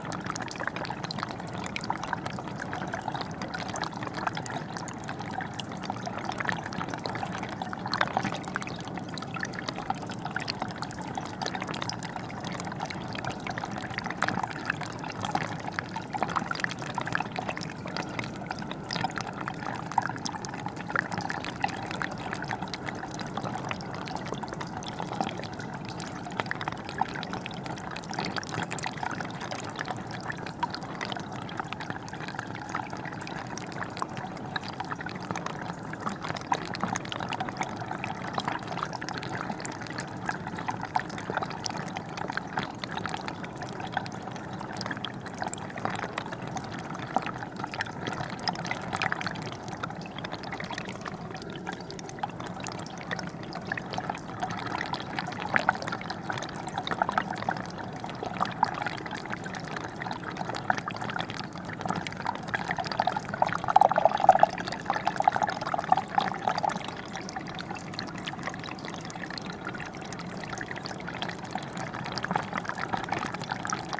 Differdange, Luxembourg - Hole in a wall
A small hole in a stone wall is spitting water. This makes a strange noise. I plugged the hole with rotten wood. Water is finding a new way inside the stone.
28 March 2016, 11:25